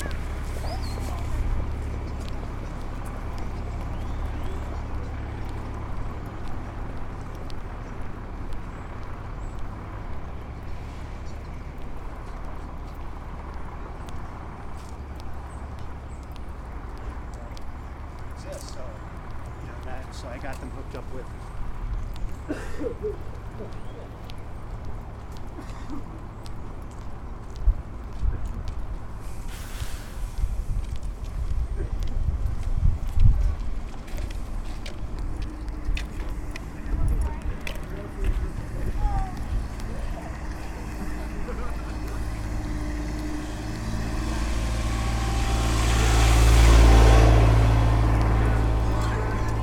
University of Colorado Boulder, Regent Drive, Boulder, CO, USA - Walk along the campus
4 February 2013, 14:35